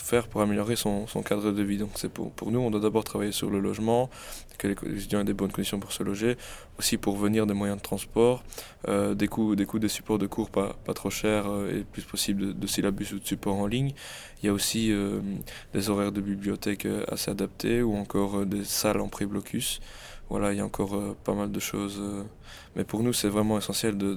Centre, Ottignies-Louvain-la-Neuve, Belgique - Social elections
Julien Barreau is the main representative of a social list called Geronimo. This list defends students rights nearby the rector.